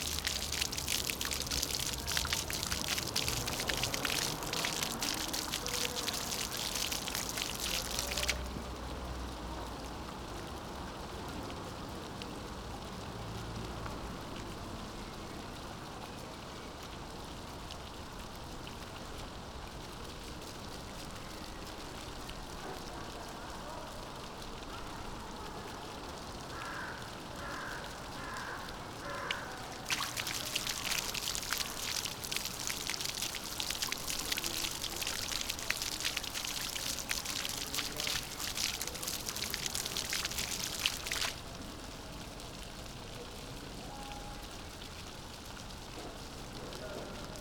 Rue de l'Alzette, Hotel de Ville, Esch-sur-Alzette, Luxemburg - fountain
Intermittent fountain at Place Hotel de Ville, Rue de l'Alzette. River Alzette flows under this street of the same name, maybe these fountains are a reminiscense on the hdden river.
(Sony PCM D50)
Canton Esch-sur-Alzette, Lëtzebuerg, 11 May 2022